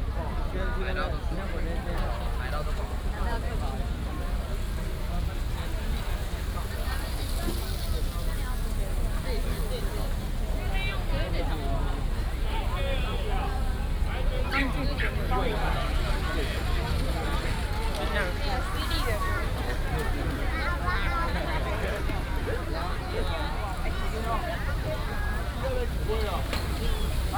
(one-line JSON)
{"title": "南大路觀光夜市, Hsinchu City - walking through the night market", "date": "2017-04-06 18:21:00", "description": "Walking through the night market, Traffic sound", "latitude": "24.80", "longitude": "120.97", "altitude": "26", "timezone": "Asia/Taipei"}